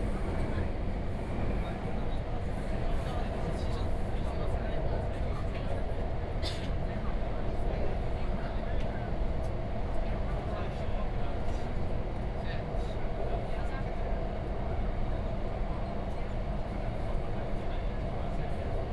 Huangpu District, Shanghai - Line 10 (Shanghai Metro)
from East Nanjing Road Station to Laoxime Station, Binaural recording, Zoom H6+ Soundman OKM II
Huangpu, Shanghai, China, 30 November 2013